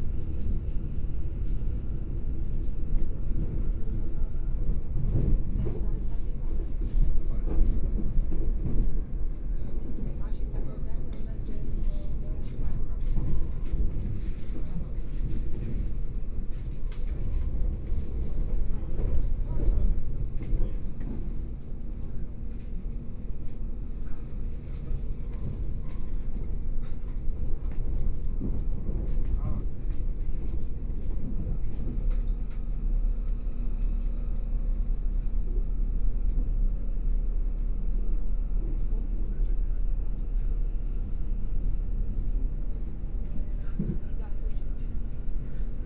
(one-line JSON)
{"title": "Hallbergmoos, Germany - S Bahn S8", "date": "2014-05-06 20:29:00", "description": "S- Bahn, Line S8, In the compartment", "latitude": "48.31", "longitude": "11.72", "altitude": "459", "timezone": "Europe/Berlin"}